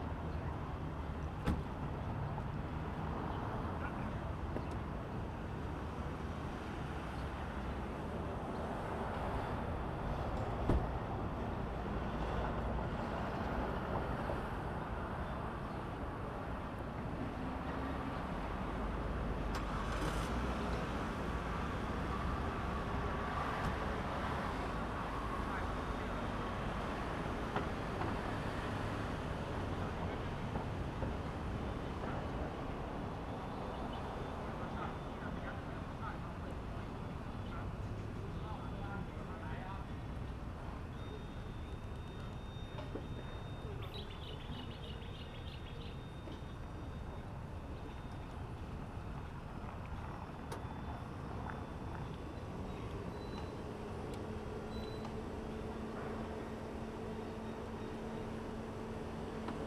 大同路海濱公園, Taitung City, Taitung County - Square in the park
Square in the park, Fighter flying past, Traffic sound, Birdsong, Tourist
Zoom H2n MS +XY